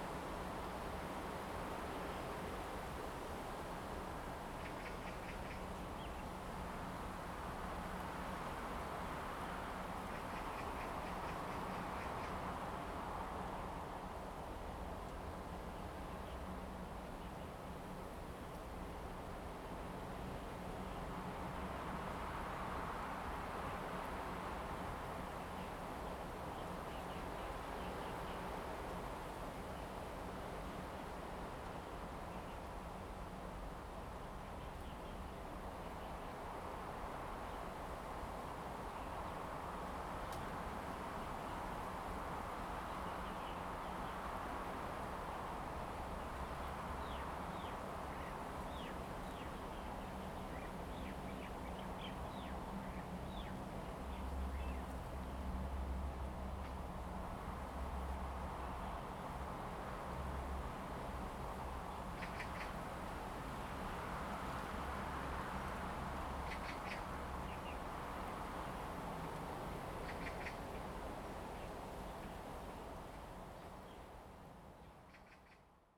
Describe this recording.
In the woods, Wind, Birds singing, Garbage truck distant sound of music, Abandoned military facilities, Zoom H2n MS +XY